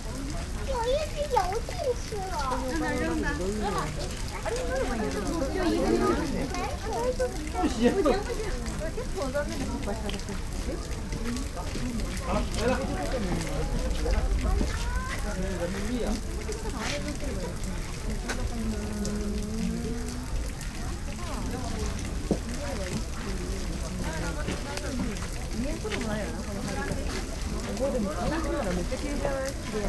water fall, Ginkakuji gardens, Kyoto - golden coins, red fishes, piled happiness
gardens sonority, kids